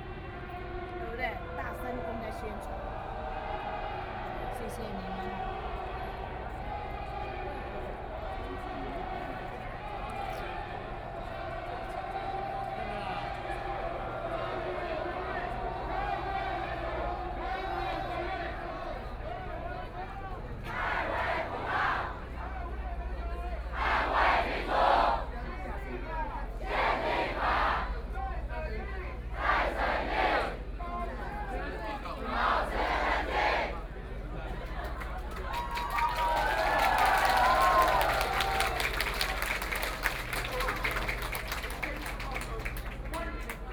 中正區幸福里, Taipei City - soundwalk
Walking around the protest area, from Qingdao E. Rd, Linsen S. Rd.Zhongxiao E. Rd.